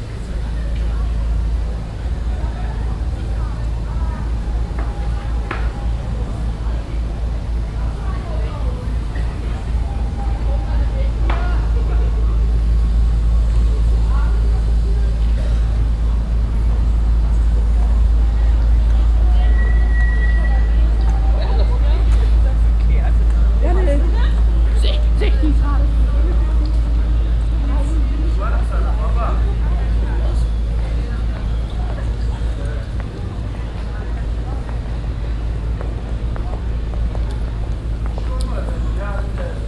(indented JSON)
{
  "title": "Düsseldorf, Altstadt, Bolkerstrasse - düsseldorf, altstadt, bolkerstrasse",
  "date": "2009-01-12 16:24:00",
  "description": "Mittags in der Fussgängerzone der Düsseldorfer Altstadt, Baulärm, im Hintergrund eine Strassenbahn in der Kurve, Passanten\nsoundmap nrw - topographic field recordings, listen to the people",
  "latitude": "51.23",
  "longitude": "6.77",
  "altitude": "42",
  "timezone": "Europe/Berlin"
}